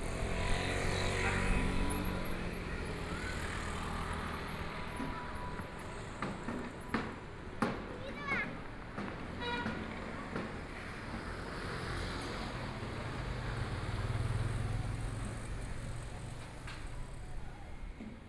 Ningbo Road, Shanghai - Walking through the market
Walking through the market, Various sounds on the street, Traffic Sound, Shopping street sounds, The crowd, Bicycle brake sound, Trumpet, Brakes sound, Footsteps, Bicycle Sound, Motor vehicle sound, Binaural recording, Zoom H6+ Soundman OKM II
Huangpu, Shanghai, China, 25 November 2013, 16:30